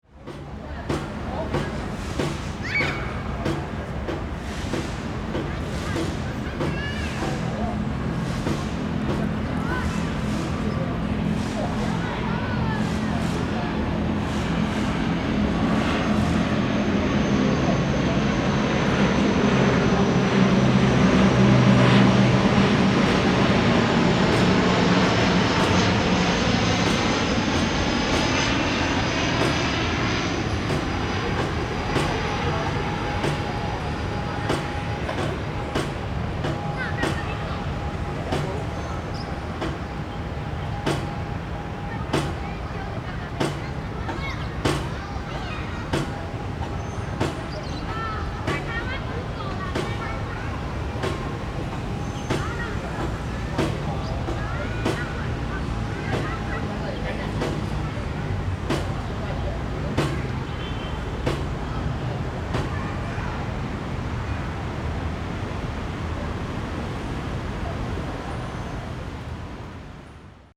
二二八和平紀念公園, Sanchong Dist., New Taipei City - Aircraft flying through
in the Park, Traffic Noise, Aircraft flying through
Rode NT4+Zoom H4n